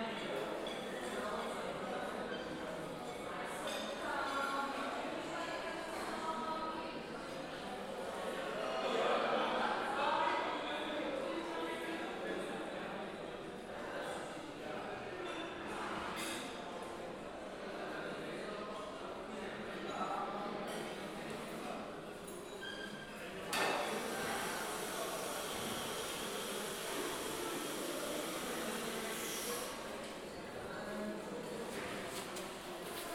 ESAD.CR, Portugal - ESAD.CR - Refeitório/Casa de Banho
Record with TASCAM DR-40.
Caldas da Rainha, Portugal, 27 February